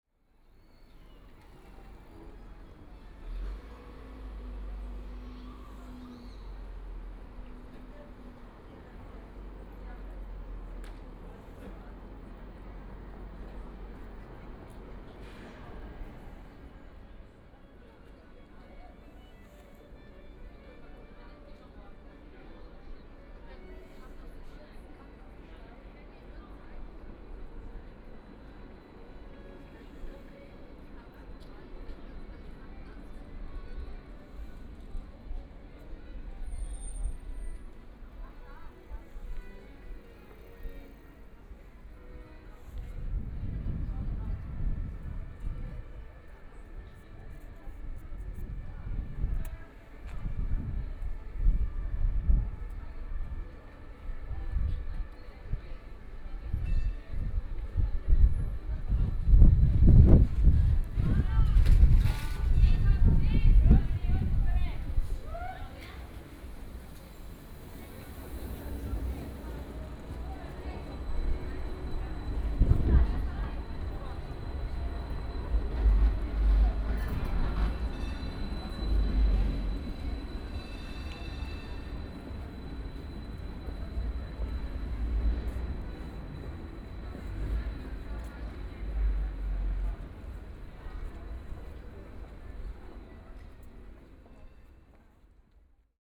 Theatinerstraße, Munich - in the Corner
In the Street, Streetcar, Traffic Sound, Street music, Tourists and pedestrians